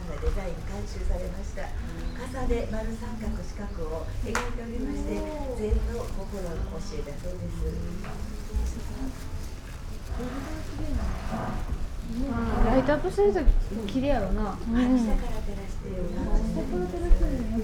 dry landscape garden, Kodai-ji, Kyoto - graveled ocean
gardens sonority, veranda
white and violet parasols
hundred of them
stacked into rain grayish gravel ocean
november, time to take longer path
Kyōto-fu, Japan, 9 November, 13:27